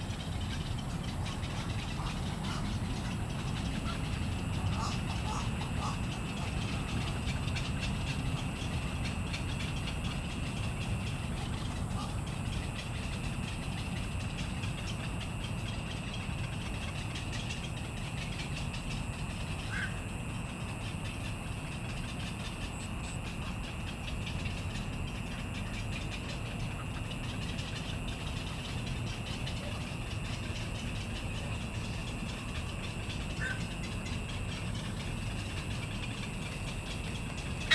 Next to the ecological pool, Bird sounds, Voice traffic environment
Zoom H2n MS+XY+Sptial audio
Taipei City, Taiwan, 17 August 2016, ~20:00